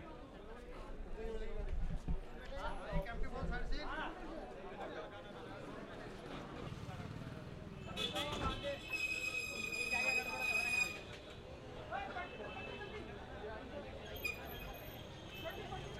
The Mall Road, Mussoorie, Uttarakhand, Inde - Bus & Taxi Station - Mussoree
Bus & Taxi Station - Mussoree
Ambiance
Uttarakhand, India, 30 May